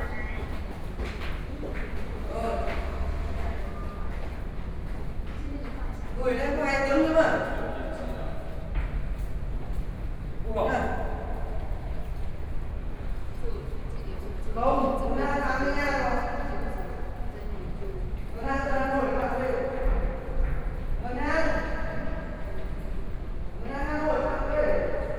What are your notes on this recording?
walking in the Underpass, Zoom H4n + Soundman OKM II